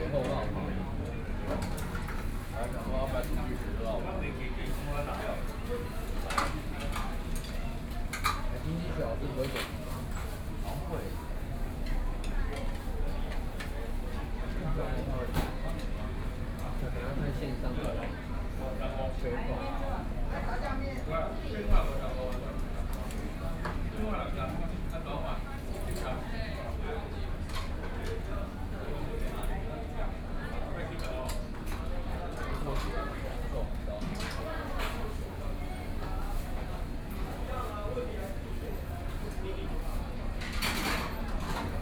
Linsen S. Rd., Taipei City - In the restaurant
In the restaurant
Binaural recordings